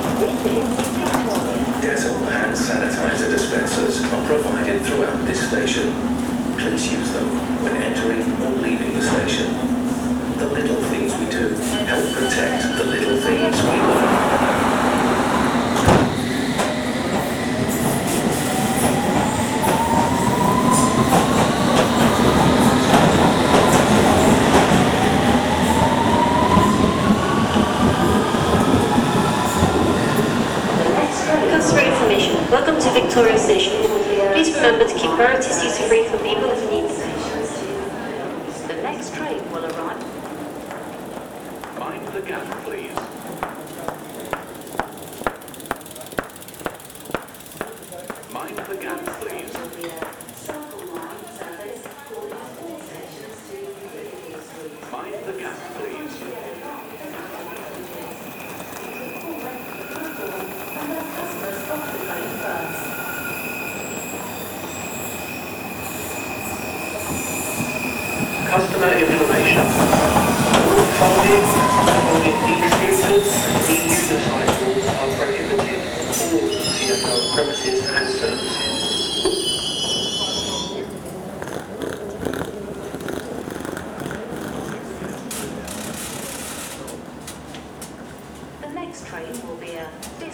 Victoria St, London, Vereinigtes Königreich - London - Victoria Station - subway
At the subway in London Victoria Station - steps, people, trains arriving and leaving - automatic announcement "mind the gap"
soundmap international:
social ambiences, topographic field recordings
England, United Kingdom, 18 March 2022